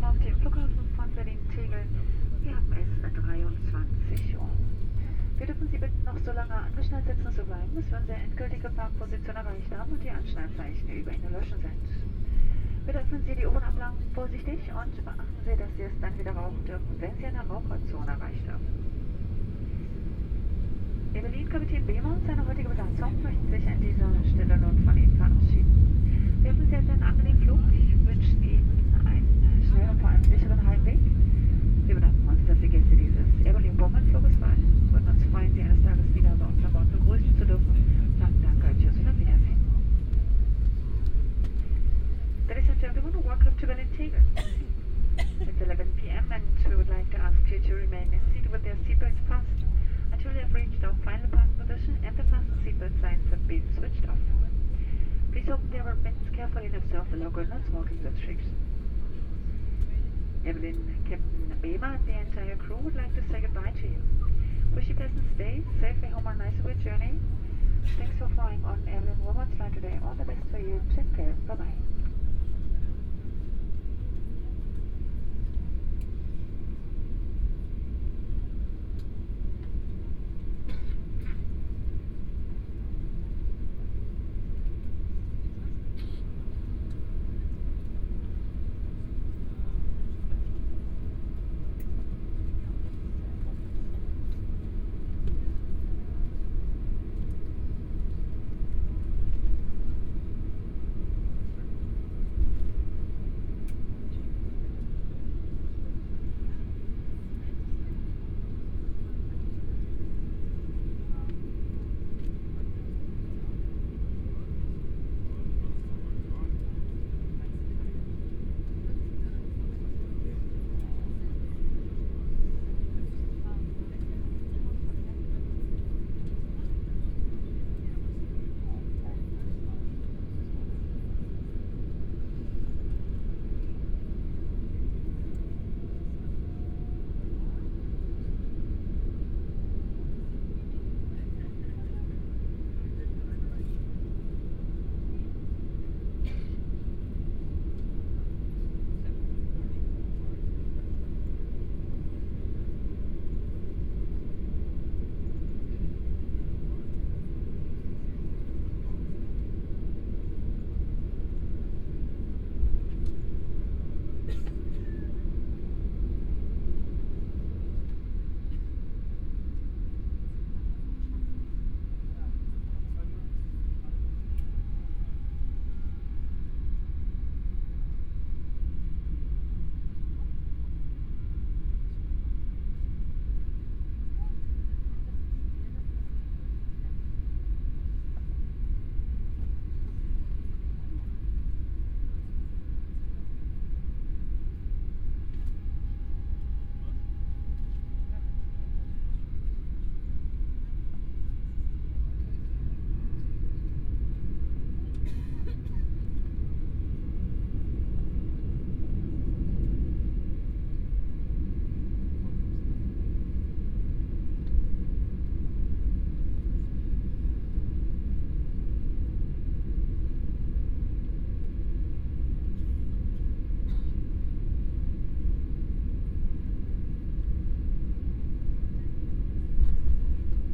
Berlin, Tegel Airport - landing
(binaural) landing at the Tegel airport. hum of the engines, the mics could't handle the pressure of the actual landing so there is some distortion. Crew welcoming the passengers to Berlin (this is always strange - they just arrived as well :). Plane circling on the runway, people unbuckling their belts, taking out hand luggage.